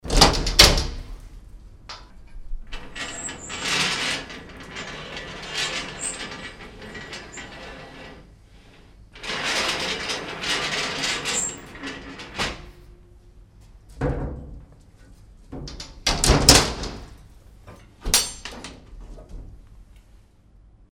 monheim, klappertorstr, fischräucherei - monheim, klappertorstr, fischräucherei, ofen
öffnen, einhängen der roste und verschliessen des räucherofens
soundmap nrw - social ambiences - sound in public spaces - in & outdoor nearfield recordings
klappertorstr, fischräucherei weber